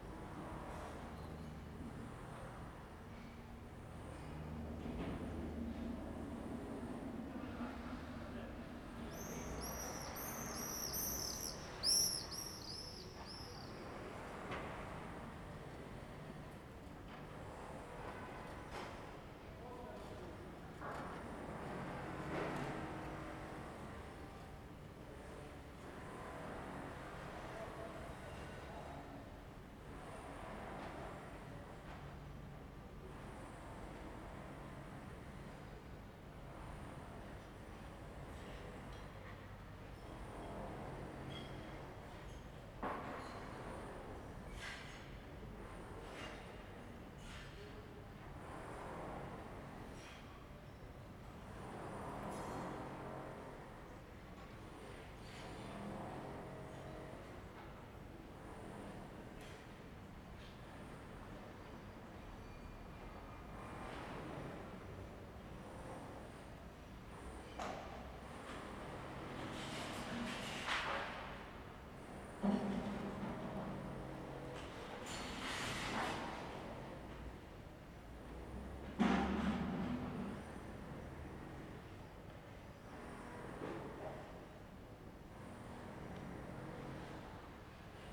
Ascolto il tuo cuore, città. I listen to your heart, city. Several chapters **SCROLL DOWN FOR ALL RECORDINGS** - Tuesday noisy Tuesday in the time of COVID19 Soundscape
"Tuesday noisy Tuesday in the time of COVID19" Soundscape
Chapter CXI of Ascolto il tuo cuore, città, I listen to your heart, city.
Tuesday, June 23th 2020. Fixed position on an internal terrace at San Salvario district Turin, one hundred-five days after (but day fifty-one of Phase II and day thirty-eight of Phase IIB and day thirty-two of Phase IIC and day 9th of Phase III) of emergency disposition due to the epidemic of COVID19.
Start at 10:18 a.m. end at 11:02 p.m. duration of recording 44’:14”